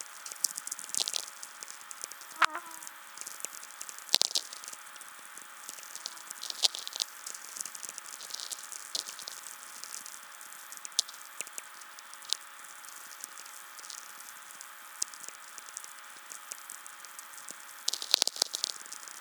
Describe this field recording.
Evening natural atmospheric radio (VLF) lstening.